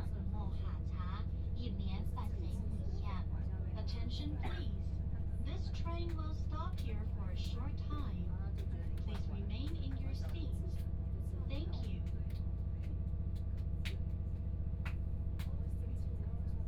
Ji'an Township, Hualien County - Accident
This paragraph recording process, Train butt process occurs, Train Parking, Binaural recordings, Zoom H4n+ Soundman OKM II